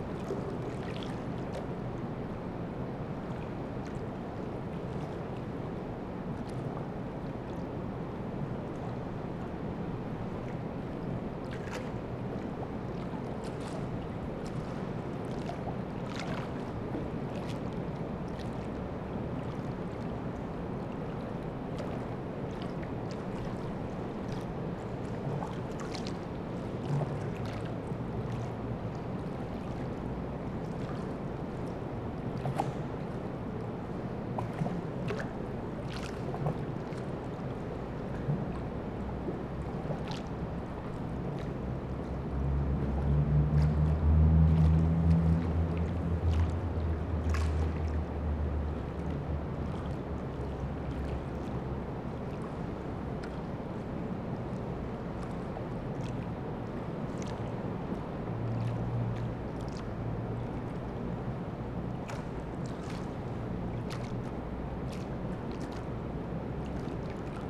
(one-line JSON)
{"title": "Lithuania, Anyksciai, under the bridge", "date": "2012-11-10 22:45:00", "description": "night sounds of the flooded river after autumn's rain and a dam in the distance..recorded while waiting for Nurse With Wound live...", "latitude": "55.53", "longitude": "25.10", "altitude": "71", "timezone": "Europe/Vilnius"}